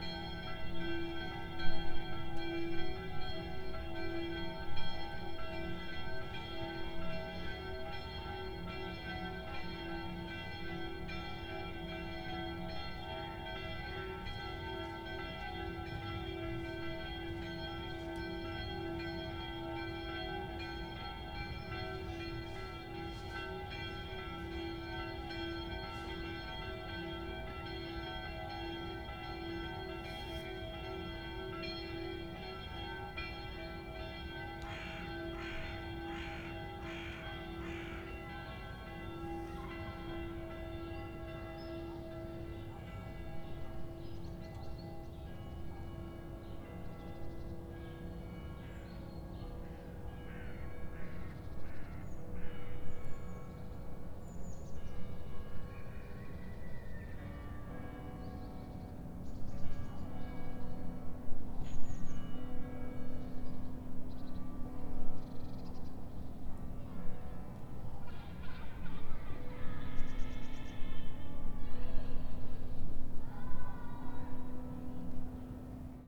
Luzern, Schweiz - Sunday Bells
Sunday bells at a sportsground next to the Museggmauer in Luzern